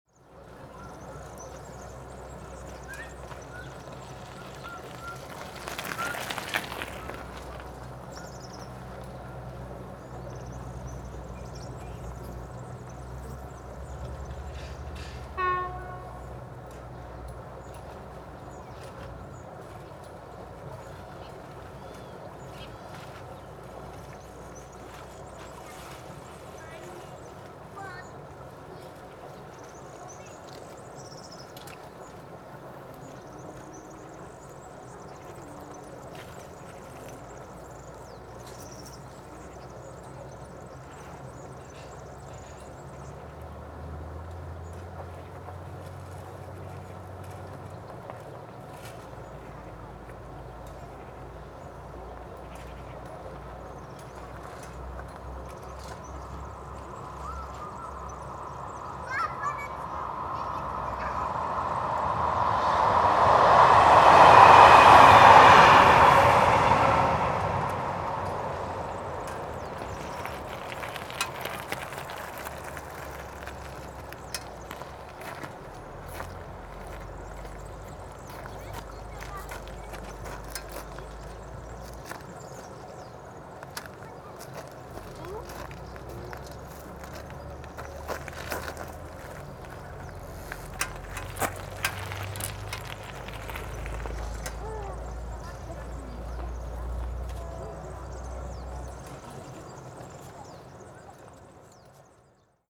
At the community gardens. Rumbling of the irrigation channel. Passing-by of a bike and a train.
Ille-sur-Têt, France, 18 July 2011